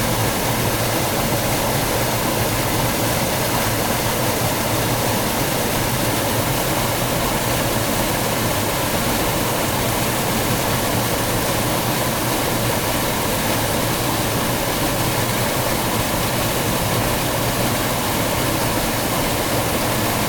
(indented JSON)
{
  "title": "nasino, water fall",
  "date": "2009-07-27 13:17:00",
  "description": "summertime, a small waterfall of the pennavaire river here sparkling into a small lake\nsoundmap international: social ambiences/ listen to the people in & outdoor topographic field recordings",
  "latitude": "44.11",
  "longitude": "8.03",
  "altitude": "392",
  "timezone": "Europe/Berlin"
}